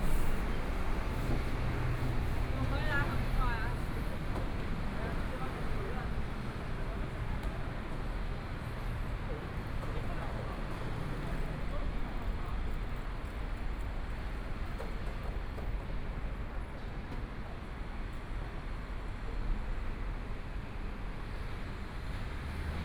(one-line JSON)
{"title": "Songjiang Rd., Zhongshan Dist. - soundwalk", "date": "2014-01-20 16:05:00", "description": "from Minquan E. Rd. to Minsheng E. Rd., Traffic Sound, Various shops voices, Construction site sounds, Binaural recordings, Zoom H4n + Soundman OKM II", "latitude": "25.06", "longitude": "121.53", "timezone": "Asia/Taipei"}